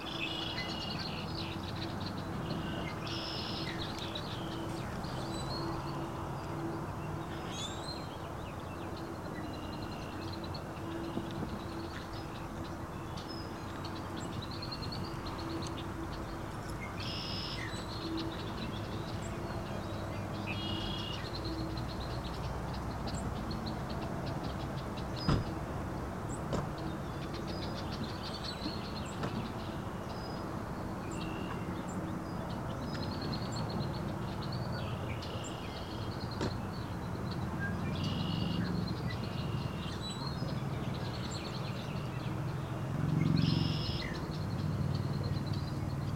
A large variety of waterfowl and songbirds call to each other. A family passes by, including two children with scooters, headed to one of the many nearby parks. To the west, across White Lake, Montague's fire siren sounds to mark 12 noon. Stereo and shotgun mics (Audio-Technica, AT-822 & DAK UEM-83R), recorded via Sony MDs (MZ-NF810 & MZ-R700, pre-amps) and Tascam DR-60DmkII.